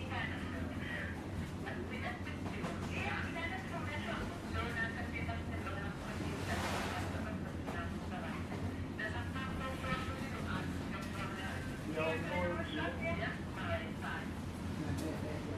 Niaqornat, Grønland - Fish Factory
The sounds of the small fish factory in Niaqornat. Recorded with a Zoom Q3HD with Dead Kitten wind shield.
Greenland, 18 June 2013